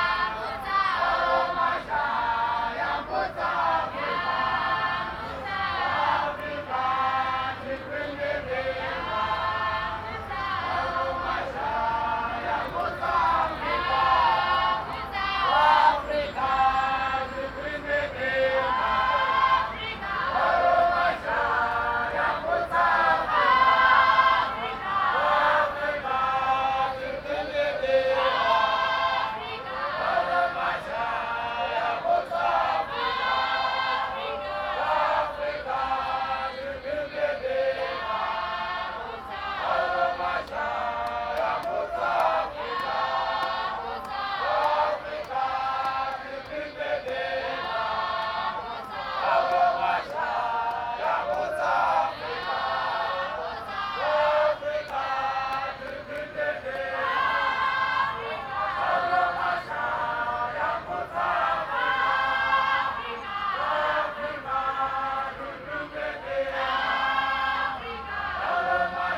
Avenues, Harare, Zimbabwe - Sabbath service
Saturday midday, crossing an open field on Herbert Chitepo, I’m attracted by the sounds of gospel; coming closer, I’m finding a large congregation, all dressed in white, seated on the ground, the Sabbath service of the African Apostolic Church as I learn…